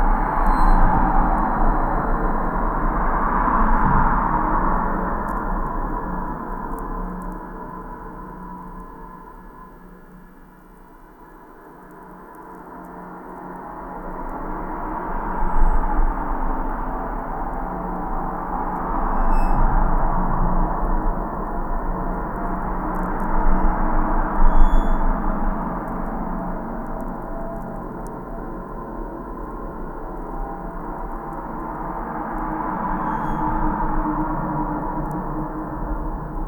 Kaliekiai, Lithuania, traffic (electromagnetic layer)
highway traffic recorded with geophone and electromagnetic antenna
Utenos apskritis, Lietuva